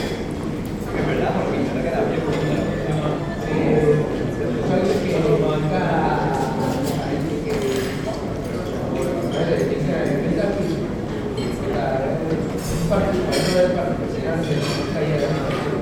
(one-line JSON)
{"title": "Modra CVUT Menza, lunchtime", "date": "2012-02-02 12:32:00", "description": "students restaurant at the Technical Universty Prague.", "latitude": "50.10", "longitude": "14.39", "altitude": "216", "timezone": "Europe/Prague"}